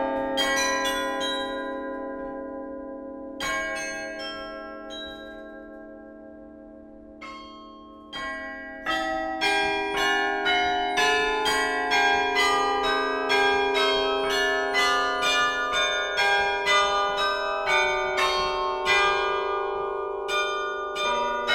Huy, Belgique - Huy carillon
The Huy carillon, a very old Hemony instrument, played by Gauthier Bernard. It's so cold he's playing with mittens.